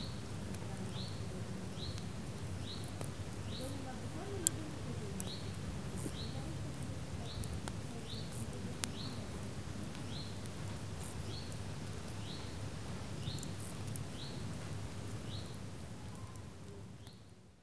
Sasino, summerhouse at Malinowa Road, backyard - fading campfire
campfire burning out. sparse drops of rain falling on the hot ashes, sizzling and evaporating.